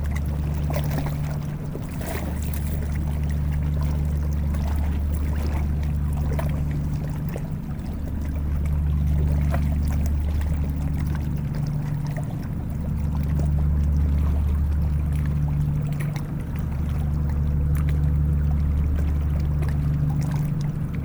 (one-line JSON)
{"title": "Notre-Dame-de-Bliquetuit, France - Boat", "date": "2016-09-17 08:00:00", "description": "An enormous industrial boat is passing by on the Seine river. This boat is transporting gas and is going to Rouen industrial zone.", "latitude": "49.50", "longitude": "0.77", "altitude": "6", "timezone": "Europe/Paris"}